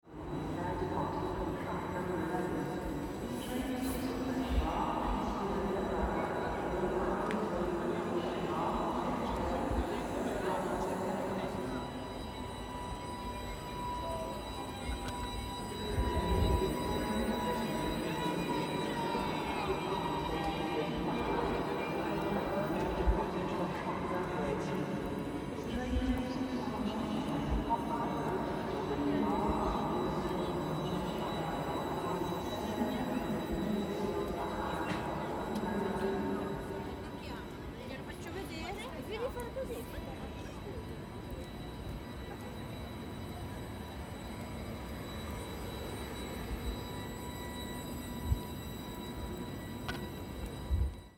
milan train station - walking on platform
milano train station platform